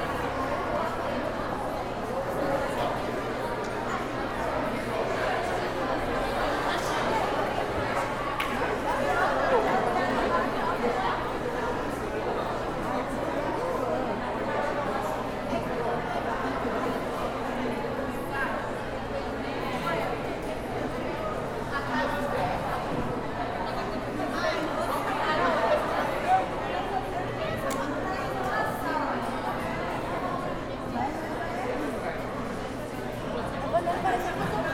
{"title": "Av. Reg. Feijó - Vila Reg. Feijó, São Paulo - SP, 03342-000, Brasil - aps captação", "date": "2019-05-03 21:16:00", "latitude": "-23.56", "longitude": "-46.56", "altitude": "778", "timezone": "America/Sao_Paulo"}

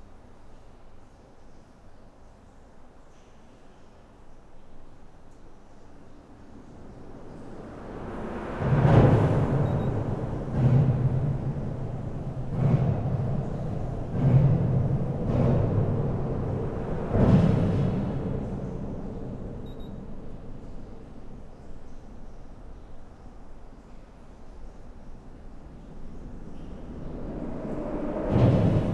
{"title": "Dinant, Belgium - Charlemagne bridge", "date": "2017-09-29 11:30:00", "description": "Inside the Charlemagne bridge, this is the discreet sound of the two alarm systems placed on the door. Its impossible to enter in a bridge without deactivate an alarm. Its understandable.", "latitude": "50.24", "longitude": "4.91", "altitude": "140", "timezone": "Europe/Brussels"}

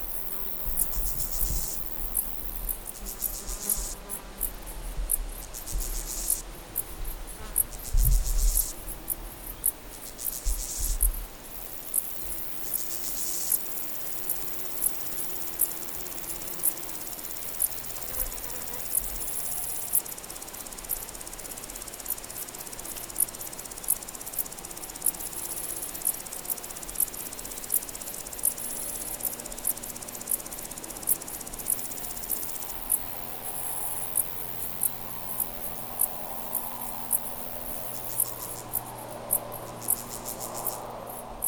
{"title": "Kaimri, Saaremaa, Estonia", "description": "roadside grasshoppers and bushcrickets", "latitude": "58.07", "longitude": "22.21", "altitude": "13", "timezone": "Europe/Tallinn"}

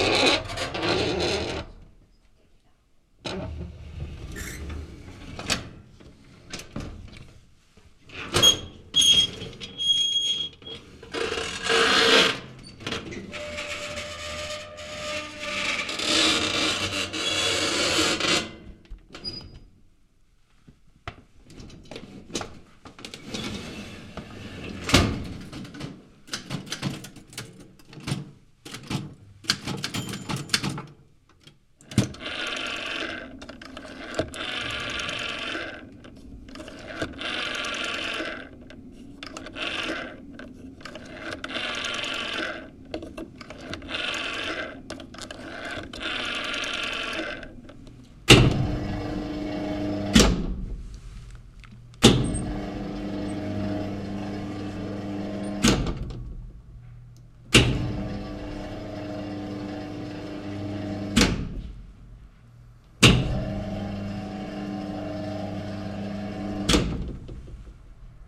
{"title": "Stasi Archive, Halle-Neustadt", "date": "2010-09-07 11:36:00", "description": "Stasi, archive, Halle-Neustadt, DDR, files, Background Listening Post", "latitude": "51.49", "longitude": "11.94", "altitude": "77", "timezone": "Europe/Berlin"}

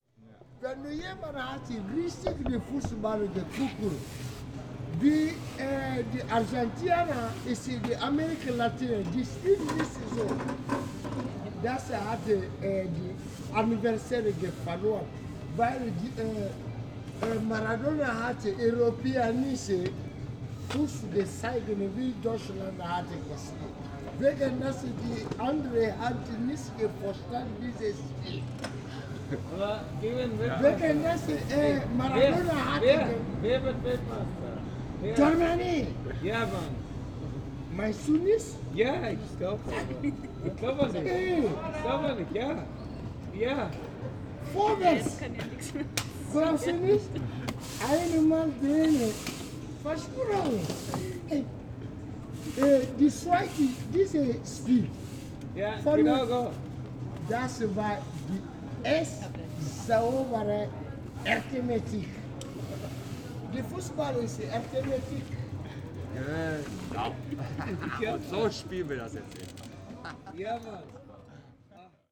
{"title": "berlin, ohlauer straße: fanmeile - the city, the country & me: footbal fan", "date": "2010-06-27 23:19:00", "description": "football world championship 2010, african football fan explains in funny german that germany will win the cup\nthe city, the country & me: june 27, 2010", "latitude": "52.50", "longitude": "13.43", "altitude": "38", "timezone": "Europe/Berlin"}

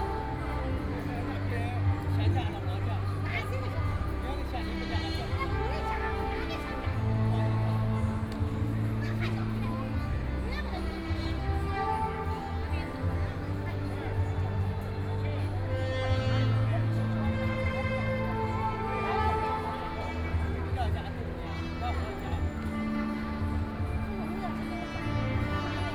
{"title": "Nanjin Road, Shanghai - Sitting in a noisy district", "date": "2013-11-23 17:50:00", "description": "Sitting in a noisy district, Extremely busy department store area, Quarrel between two cleaning staff, Binaural recording, Zoom H6+ Soundman OKM II", "latitude": "31.24", "longitude": "121.47", "altitude": "24", "timezone": "Asia/Shanghai"}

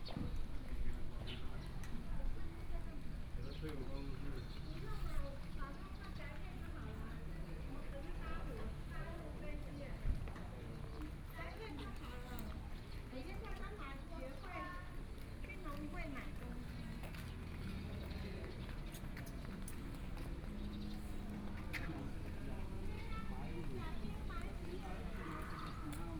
{"title": "新興村, Chihshang Township - In the Square", "date": "2014-09-07 12:52:00", "description": "Come out from the restaurant, Tourists, In the Square, Sightseeing area of agricultural products, The weather is very hot\nZoom H2n MS +XY", "latitude": "23.11", "longitude": "121.20", "altitude": "294", "timezone": "Asia/Taipei"}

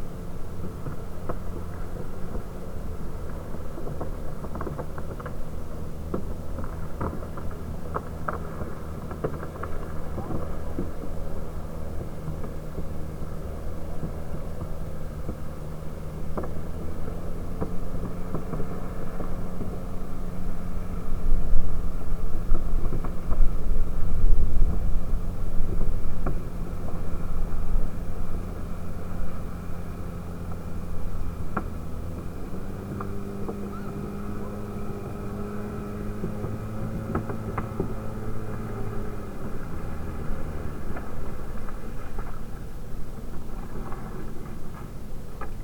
{"title": "Sollefteå, Sverige - Fishing with net in the river", "date": "2012-07-18 20:28:00", "description": "On the World Listening Day of 2012 - 18th july 2012. From a soundwalk in Sollefteå, Sweden. Some fishing from boats and the opposite shore (1 people in the boat and three people on the shore, fishes with a net in the river Ångermanland, in swedish this old traditional way of fishing in the river is called \"dra not\" in Sollefteå. WLD", "latitude": "63.17", "longitude": "17.30", "altitude": "3", "timezone": "Europe/Stockholm"}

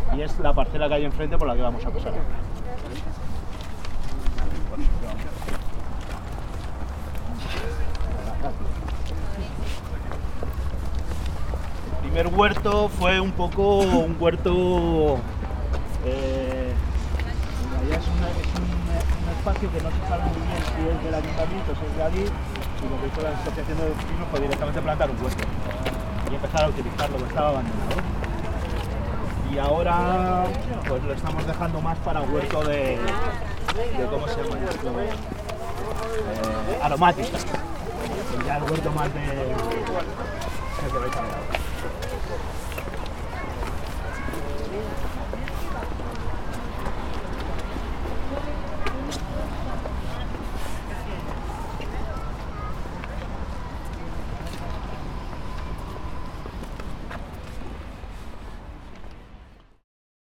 {"title": "C.S. Seco, calle Luis Peidró, Madrid - Pacífico Puente Abierto - Transecto 00 - C.S. Seco, inicio del paseo", "date": "2016-04-07 18:30:00", "description": "Pacífico Puente Abierto - Transecto. Inicio del recorrido, C.S. Seco", "latitude": "40.40", "longitude": "-3.67", "altitude": "603", "timezone": "Europe/Madrid"}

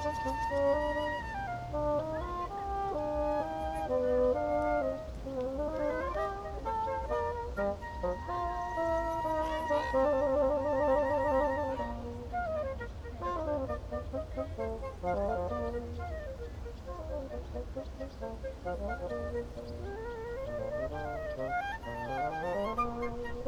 {"title": "Landpyramide, Branitzer Park, Cottbus - musicians rehearsing, ambience", "date": "2019-08-24 15:50:00", "description": "two musicians rehearsing in Branitzer Park, sounds of a park train, pedestrians, kids, swans and wind\n(Sony PCM D50)", "latitude": "51.74", "longitude": "14.36", "altitude": "80", "timezone": "Europe/Berlin"}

